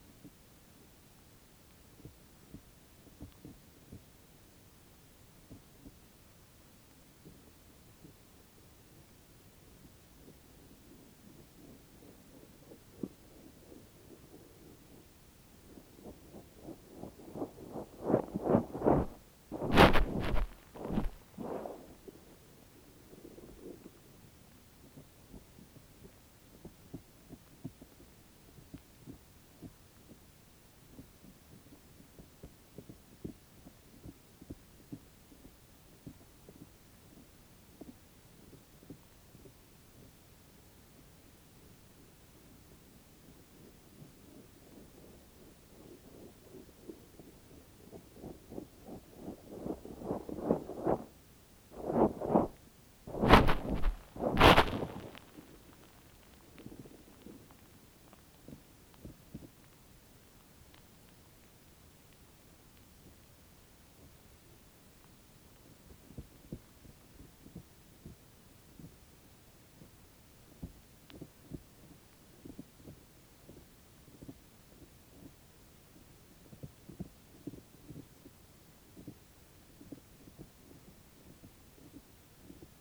Bourguignons, France - Mole digging
This strange recording is simply a mole digging a tunnel. I saw a mound moving, so walking very cautiously, I put a contact microphone into the mound... and I heard it was working. Great ! As this, you can hear it digging (very deaf small sound) and after pushing the clay outside (noisy clay movements). And again and again and again. At the end of the recording, the microphone made a jump into the mound, collapsing !